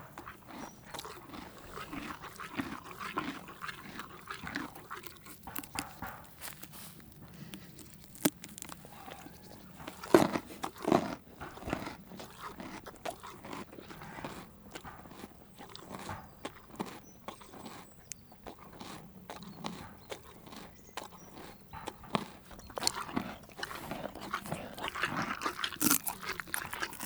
Lombron, France - Donkey eating
In my huge 'animals eating' collection, this is here the turn of Ziggy, the donkey, eating carrots. Not easy to record, as Ziggy breathes also using the mouth, it's quite different from the horse. For sure, it's yet another disgusting record of an umpteenth victim animal undergoing the placement of two microphones nearly inside the mouth !